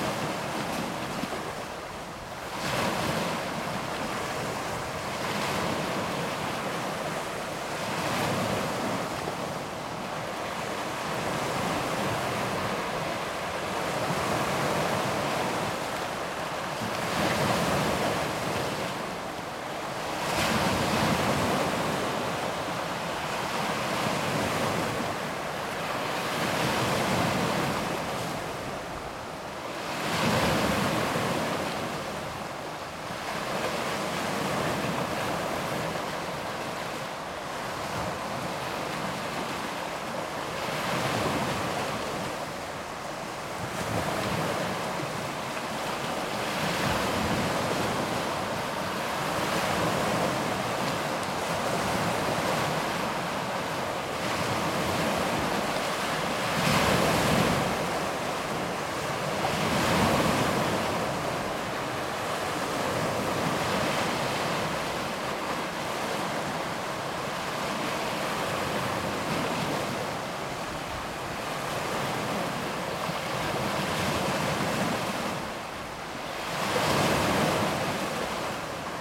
Plaża dla psów w Brzeźnie, Przemysłowa, Gdańsk, Polska - Sea Waves On a Rocky Shore
This recording was made with a Sony PCM-D100 handheld placed on a Rycote suspension. On top of a standard Sony windshield, I have placed Rycote BBG Windjammer. It was a fairly windy afternoon.